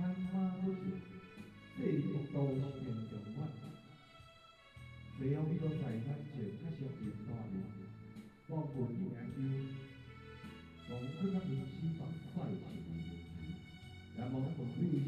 Traditional funeral ceremony in Taiwan, Zoom H4n + Soundman OKM II